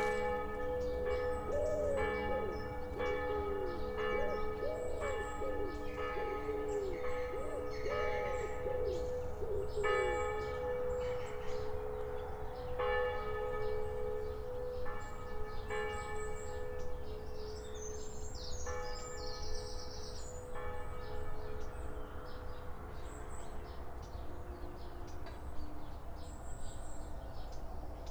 Saint-Gilles-des-Marais, France - 7am church bells
What is interesting in this recording is the changing tone of the bells after the initial three-ring signature. I imagine a different hammer/clapper is used to achieve the slightly 'phasey' and duller sounding ring for the continuous tolling that follows. Oh and at the beginning you can here the rustle of a field mouse curious as to what I was doing - very sweet!